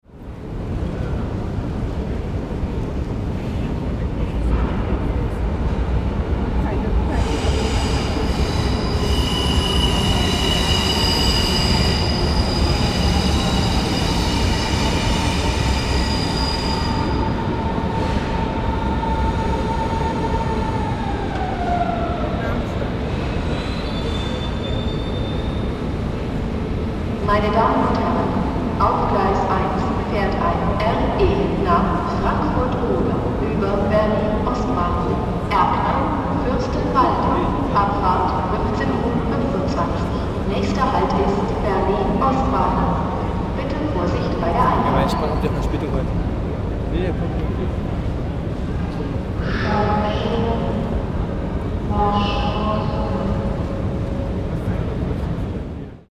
Waiting for a train back to Frankfurt Oder.